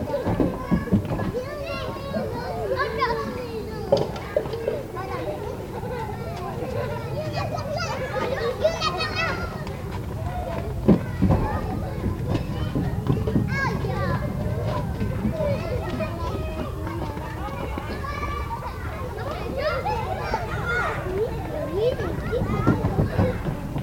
Court-St.-Étienne, Belgique - The station school
The station school, called like that because it's near the Court-St-Etienne station. Very young children are playing at the yard.
Court-St.-Étienne, Belgium